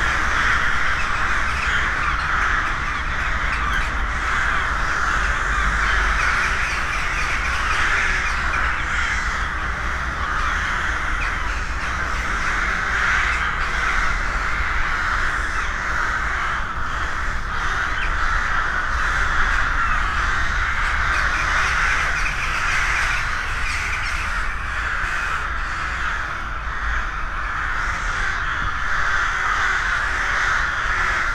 Cukrovarská, Rimavská Sobota, Slovensko - Near the Golden Park Casino
28 December 2020, 14:47